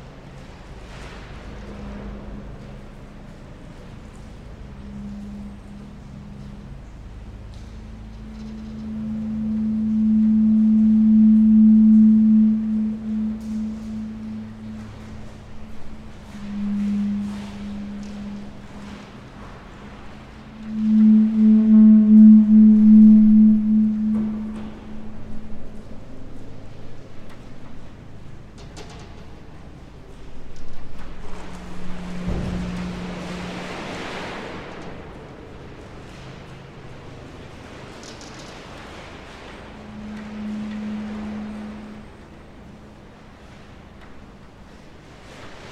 sonic sofa feedback, Muggenhof/Nürnberg
feedback sounds from the electrified sonic sofa installation
Nuremberg, Germany, 12 April 2011, 21:10